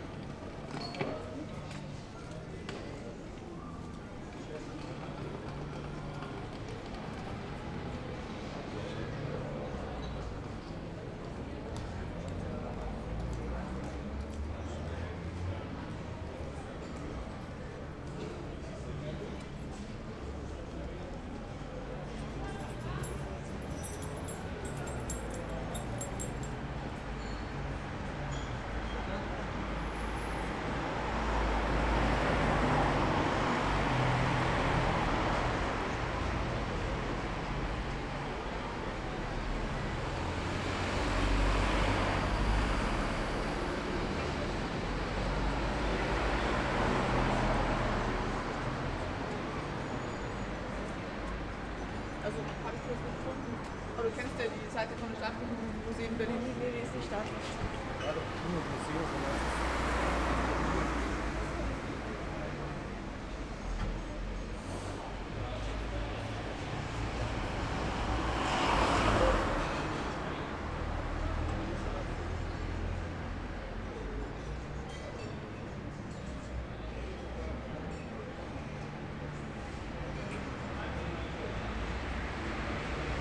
berlin: wildenbruchstraße - the city, the country & me: in front of spanish restaurant
in front of spanish restaurant "maria mulata"
World Listening Day, WLD
the city, the country & me: july 18, 2010